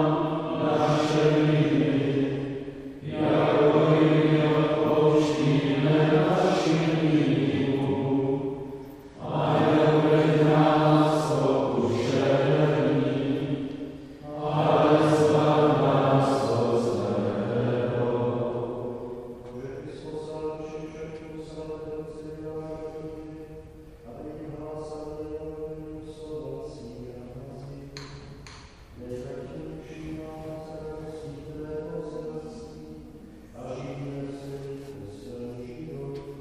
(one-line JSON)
{"title": "Maria Angelica church of Capuchine Monastery", "date": "2008-06-07 18:22:00", "description": "Mass at the Capuchine Monastery at Nový Svět, celebration of Saint Kyril and Methodeus, Czech Patrons.", "latitude": "50.09", "longitude": "14.39", "altitude": "266", "timezone": "Europe/Prague"}